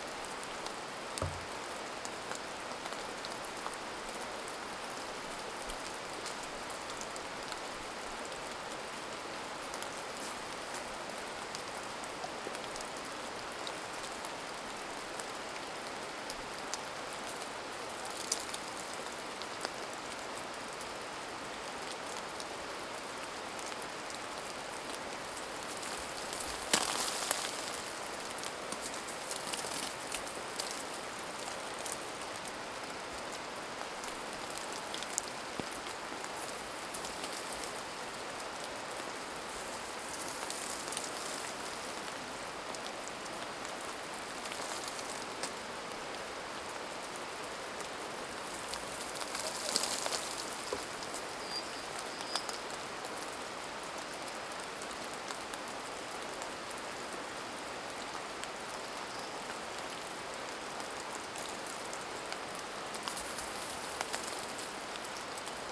Červená, Kašperské Hory, Czechia - Sumava snow falling from trees
Recording in Cervena in the Sumava National Park, Czech Republic. A winter's morning, snow slowly melting and falling from trees next to a small stream.
16 January 2019, 09:10